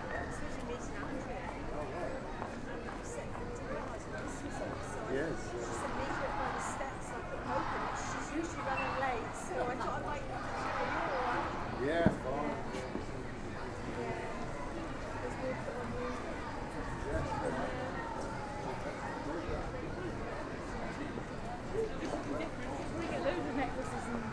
High Cross, Truro Cathedral, Truro, Cornwall, UK - St. Piran's Day Celebration
Recorded on Falmouth University Field Trip with students from Stage 2 'Phonographies' module:
Soundfield SPS200 recorded to Tascam DR-680, stereo decode
5 March